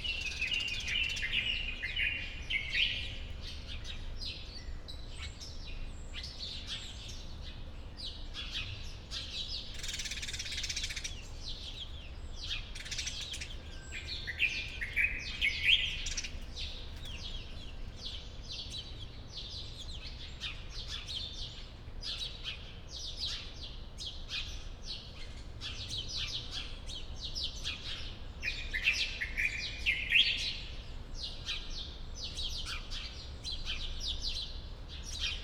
early birds at riad Denis Maisson
(Sony PCM D50, Primo EM172)
Marrakesh, Morocco, February 2014